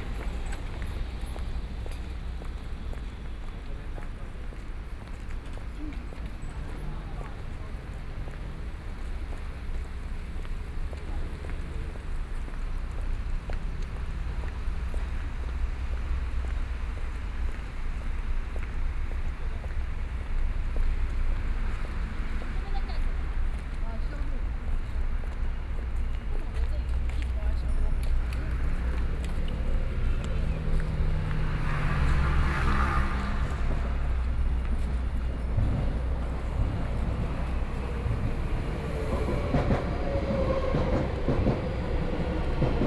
Zhongzheng East Rd., Tamsui District - walking in the street
walking along the trail next to the MRT track, Binaural recordings, Sony PCM D50 + Soundman OKM II